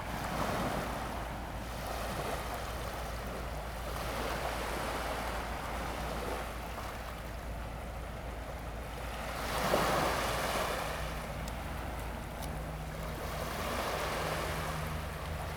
長潭里, Keelung City - the waves
Sound of the waves, On the coast
Zoom H2n MS+XY +Sptial Audio
Keelung City, Taiwan, 4 August 2016